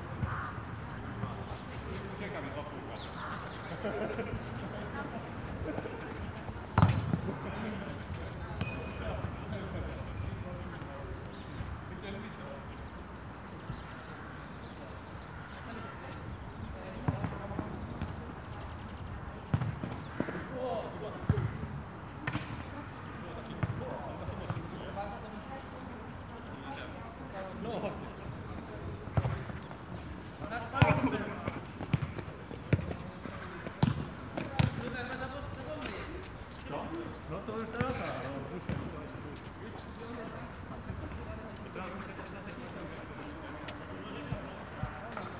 Poznan, Jana III Sobieskiego housing estate - afternoon match
an amateur soccer match played on a community field a warm Saturday afternoon. players taking a brake and then resuming the game.
Poznan, Poland, 1 March 2014